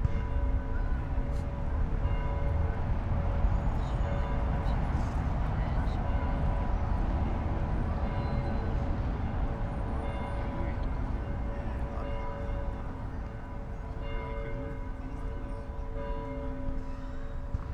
{
  "title": "Reuterplatz, Berlin, Deutschland - 6pm churchbells",
  "date": "2019-09-20 18:00:00",
  "description": "two churches at Reuterplatz ringing their bells at 6pm. Many churches were invited to ring their bells this day, for climate change to happen.\n(Sony PCM D50, Primo EM172)",
  "latitude": "52.49",
  "longitude": "13.43",
  "altitude": "40",
  "timezone": "Europe/Berlin"
}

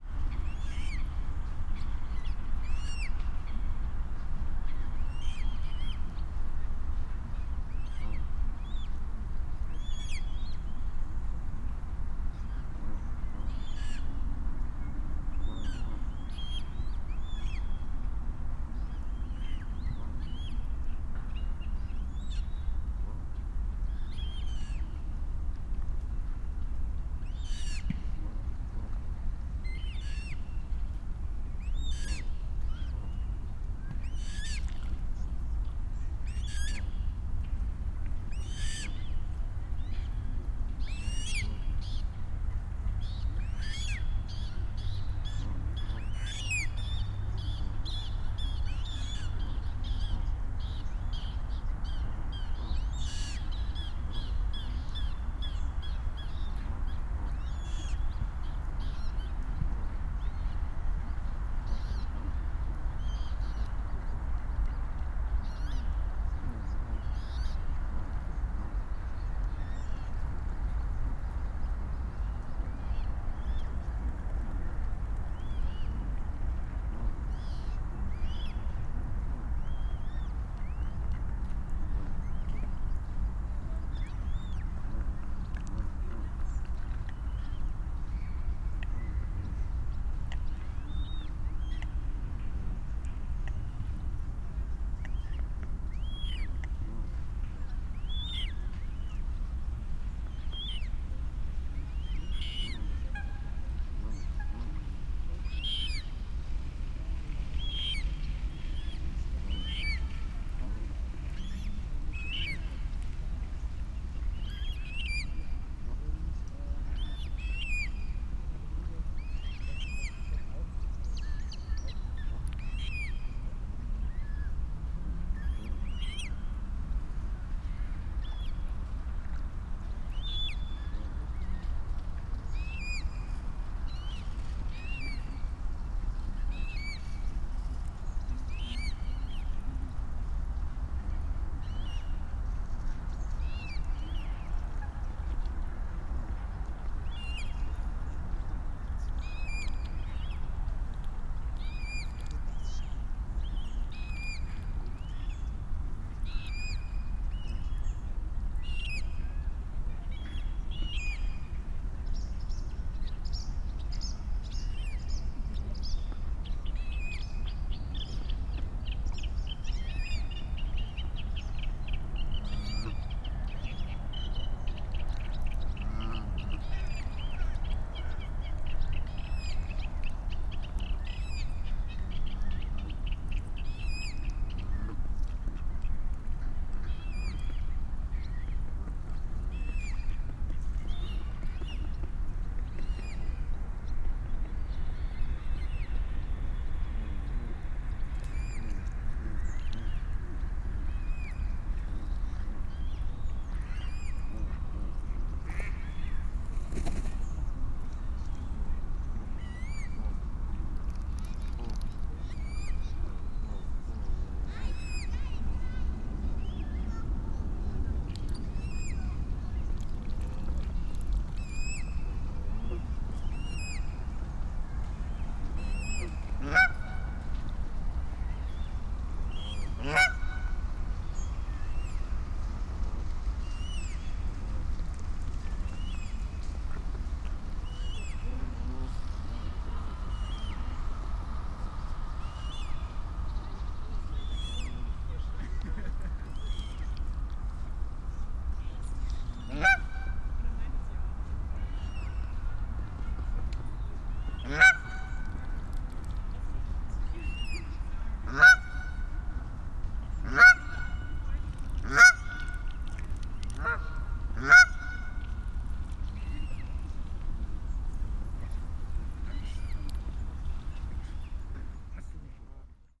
{
  "title": "Decksteiner Weiher, Köln, Deutschland - evening ambience /w Eurasian coot, reed warbler, geese",
  "date": "2019-07-29 19:20:00",
  "description": "Köln, Decksteiner Weiher, Stadtwald, city forest, ambience at the pond, Eurasian coot (Blässhuhn, Fulica atra), reed warbler (Drosselrohrsänger, Acrocephalus arundinaceus) canada goose calling at the end quite nearby\n(Sony PCM D50, Primo EM172)",
  "latitude": "50.92",
  "longitude": "6.89",
  "altitude": "62",
  "timezone": "Europe/Berlin"
}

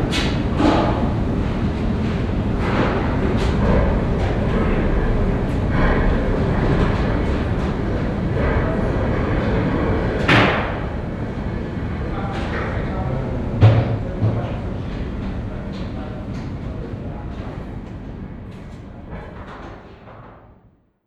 {
  "title": "Rheinpark Bilk, Düsseldorf, Deutschland - Düsseldorf, Rheinturm, visitor platform",
  "date": "2012-11-22 14:40:00",
  "description": "At the indoor visitor platform. The sounds of visitors steps walking and talking by the glass window view and taking photographs and the sounds of workers who prepare the technique for an evening party event plus the permanent sound of a ventilation.\nsoundmap nrw - social ambiences, sonic states and topographic field recordings",
  "latitude": "51.22",
  "longitude": "6.76",
  "altitude": "41",
  "timezone": "Europe/Berlin"
}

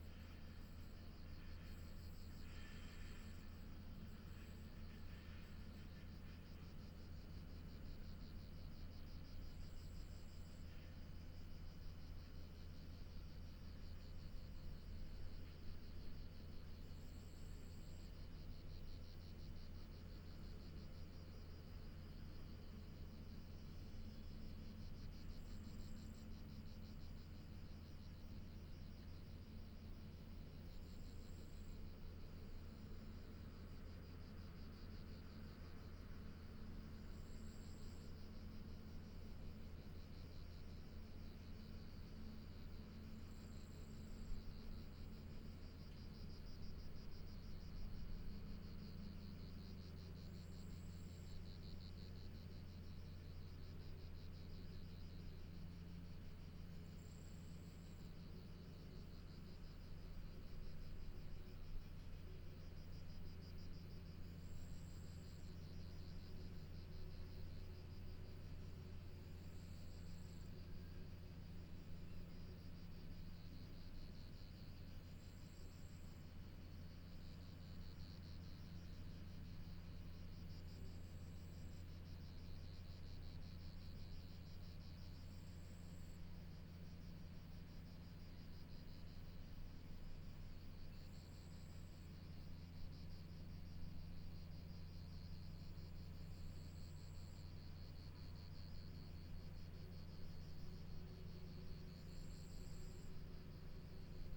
{
  "title": "Črnotiče, Črni Kal, Slovenia - Train station Črnotiče",
  "date": "2020-07-10 10:31:00",
  "description": "Electric locomotive and and electric passenger train. Recorded with Lom Usi Pro.",
  "latitude": "45.55",
  "longitude": "13.89",
  "altitude": "389",
  "timezone": "Europe/Ljubljana"
}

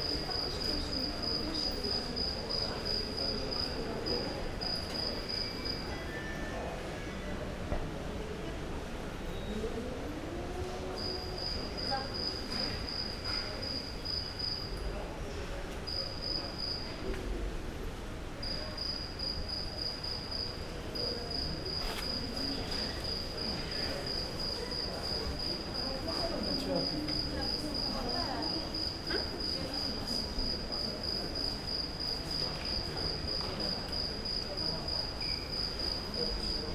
{
  "title": "Heraklion Airport, Heraklion, Crete - airport cricket",
  "date": "2012-09-24 02:34:00",
  "description": "a cricket got inside one of the airport halls. passengers waiting for their luggage.",
  "latitude": "35.34",
  "longitude": "25.17",
  "altitude": "36",
  "timezone": "Europe/Athens"
}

